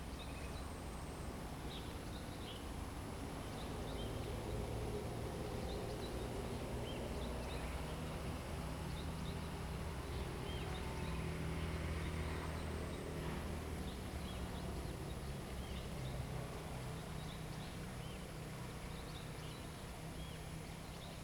On the old bridge, traffic sound, Bird call, The sound of the construction percussion, ambulance
Zoom H2n MS+XY
Guanxi Township, Hsinchu County, Taiwan, 14 August 2017, 16:46